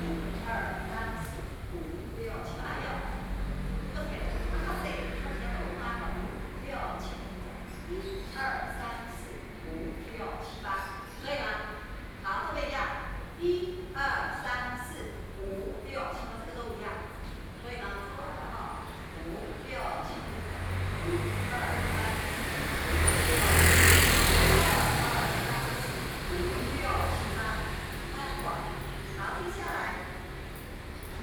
Xīnzhuāng Rd, New Taipei City - dance